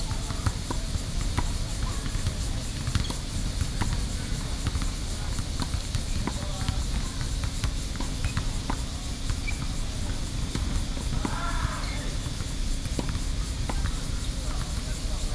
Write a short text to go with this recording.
Basketball, Tennis, Environmental Noise, Sony PCM D50 + Soundman OKM II